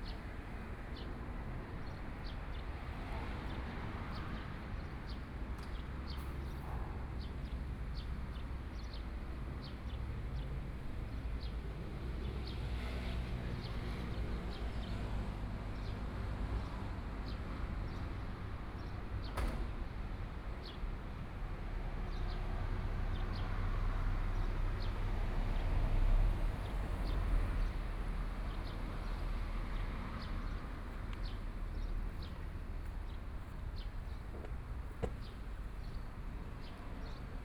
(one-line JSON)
{"title": "Sec., Zhongshan Rd., 宜蘭市南津里 - under the railroad tracks", "date": "2014-07-26 11:27:00", "description": "under the railroad tracks, Traffic Sound, Birds, Trains traveling through\nSony PCM D50+ Soundman OKM II", "latitude": "24.73", "longitude": "121.77", "altitude": "7", "timezone": "Asia/Taipei"}